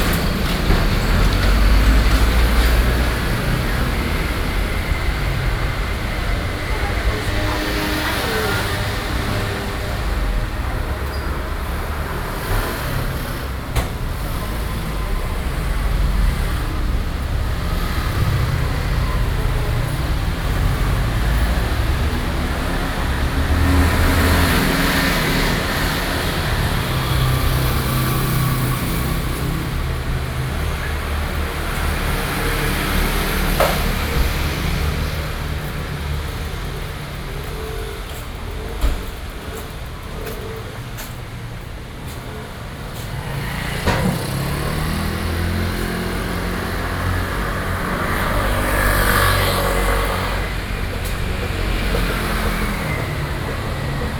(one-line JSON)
{"title": "Zhonghua Rd., Xindian District, New Taipei City - Traffic Noise", "date": "2012-06-28 15:05:00", "description": "Sitting on the roadside, Traffic Noise, Zoom H4n+ Soundman OKM II", "latitude": "24.97", "longitude": "121.54", "altitude": "29", "timezone": "Asia/Taipei"}